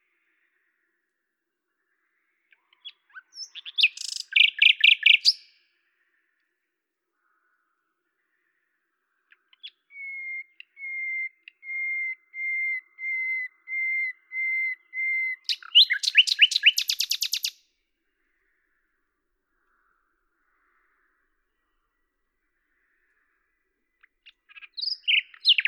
Lavours, France - rossignol à LAVOURS
10/05/1998
Tascam DAP-1 Micro Télingua, Samplitude 5.1